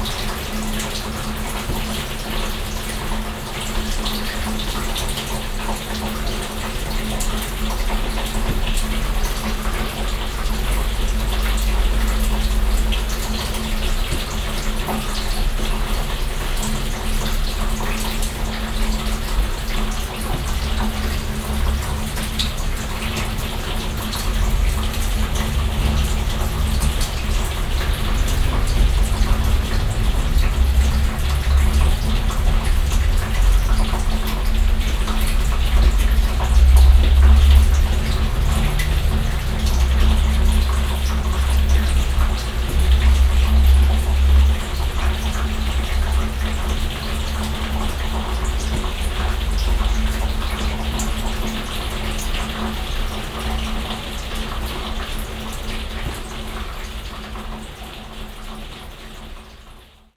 refrath, lustheide, garagenabfluss bei regen
garagenabfluss und dach bei starkem regen
soundmap nrw - social ambiences - sound in public spaces - in & outdoor nearfield recordings